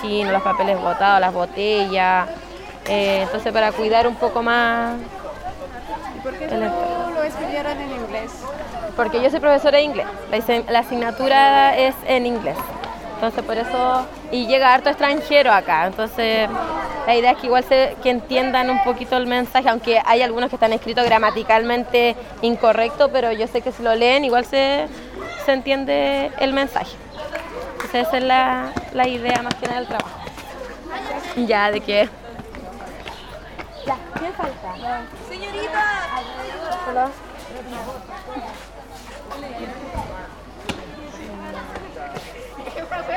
Plaza el Descanso, Valparaíso, Chile - the english teacher explains
the english teacher of the nearby school explains an environmental action of the kids, who are putting up poster and cardboards around the place, saying that people should take care about the planet in general and particularly this place
(Sony PCM D50)